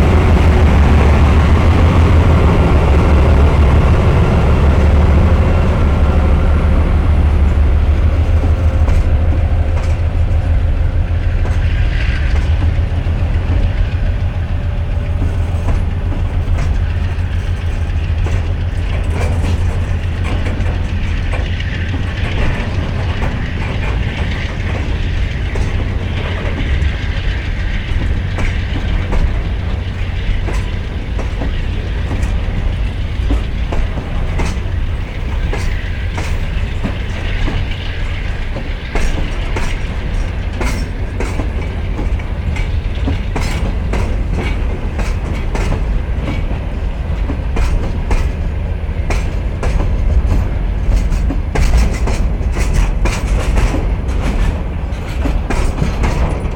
Long train is crossing the bridge near Paldiski street in the middle of the night. (jaak sova)